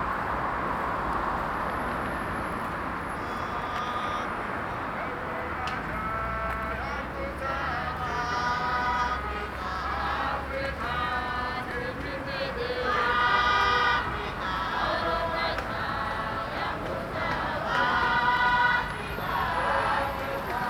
Avenues, Harare, Zimbabwe - Sabbath service
Saturday midday, crossing an open field on Herbert Chitepo, I’m attracted by the sounds of gospel; coming closer, I’m finding a large congregation, all dressed in white, seated on the ground, the Sabbath service of the African Apostolic Church as I learn…